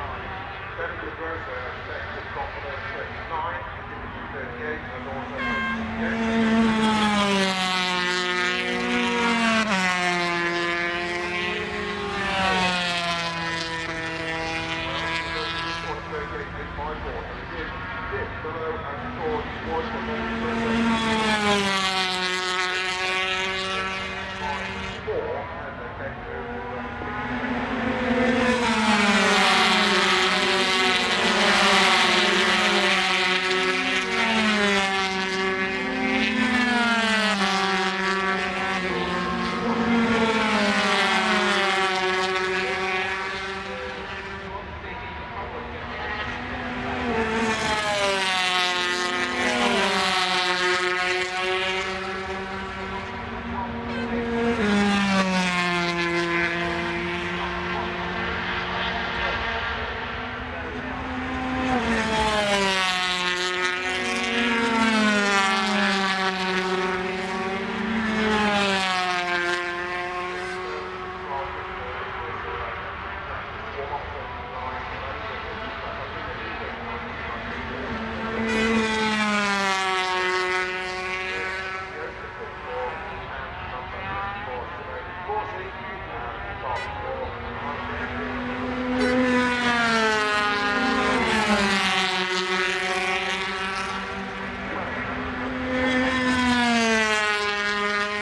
Castle Donington, UK - British Motorcycle Grand Prix 2003 ... 125 ...
125cc motorcycle warm up ... Starkeys ... Donington Park ... warm up and associated noise ...
Derby, UK, 13 July